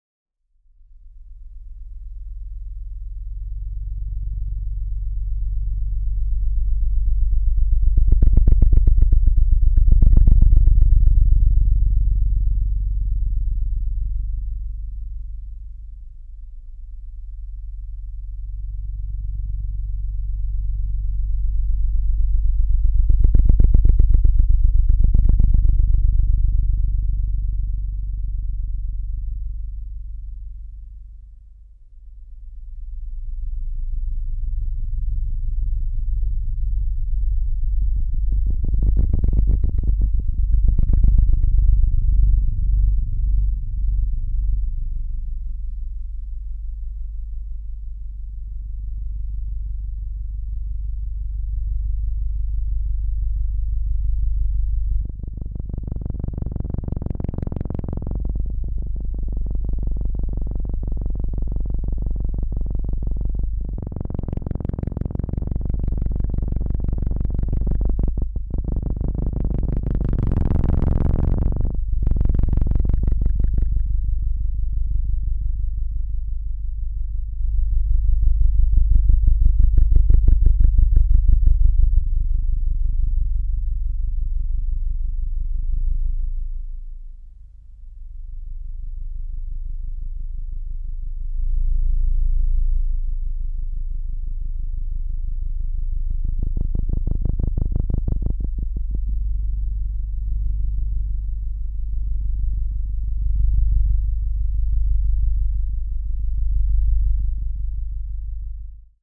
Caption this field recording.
equipment used: AIWA Digital MD recorder, EM field sensor headphones designed by Christina Kubisch, Recorded near security gates of library. The headphones used convert EM waves into audible tones.